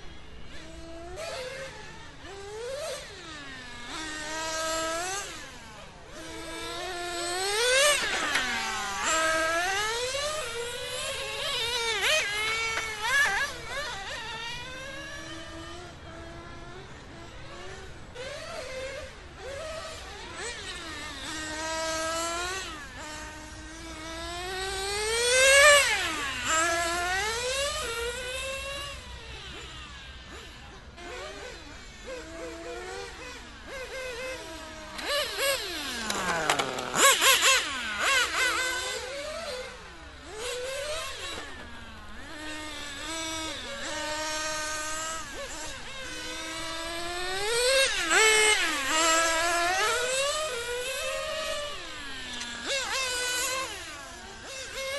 Radio Controlled Racing Cars, Littlehampton, South Australia - Radio Controlled Racing Cars
Recorded Saturday 4 Apr 2009 at 13:50
Radio Controlled Racing Car Club in Littlehampton.